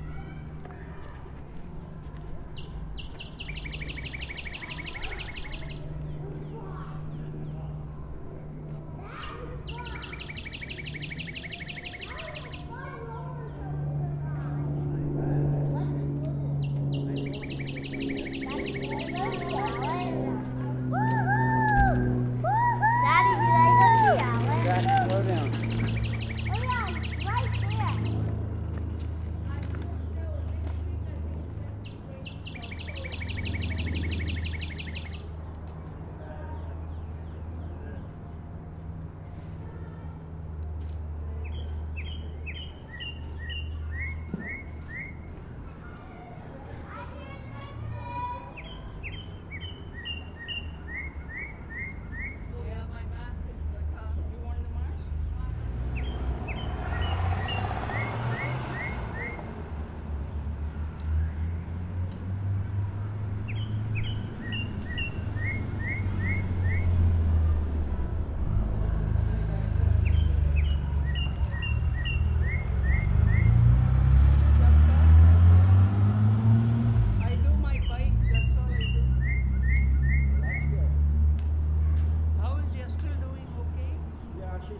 Richview Ave, Toronto, ON, Canada - Cardinal Singing on Sunny Day
Cardinal singing on a sunny day on a quiet street.
Ontario, Canada